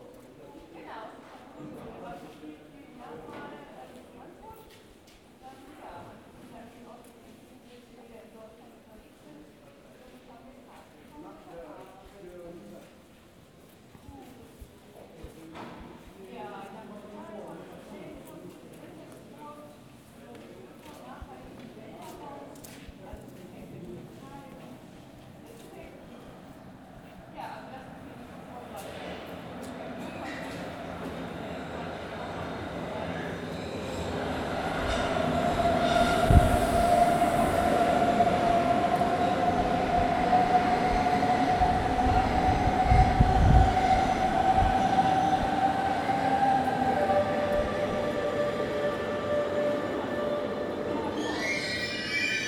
The Underground station, trains comming and going, and sometimes it´s amazingly silent!